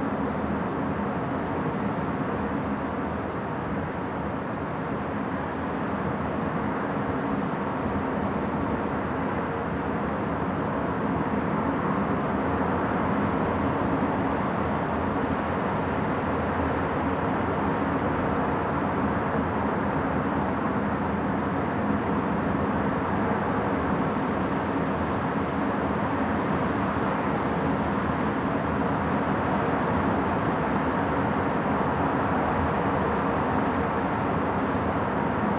Kinsealy, Vent i Circulacio
Wind and Traffic
Leinster, Republic of Ireland